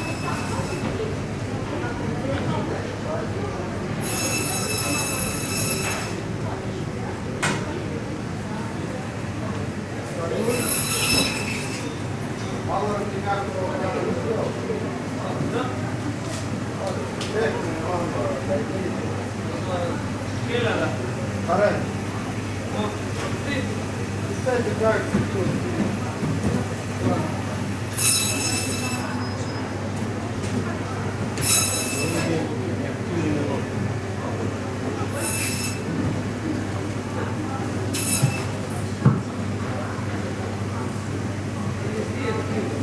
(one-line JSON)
{"title": "Baltijaam meat market sounds 2, Tallinn", "date": "2011-04-19 14:30:00", "description": "sounds of the Baltijaam meat market", "latitude": "59.44", "longitude": "24.73", "altitude": "18", "timezone": "Europe/Tallinn"}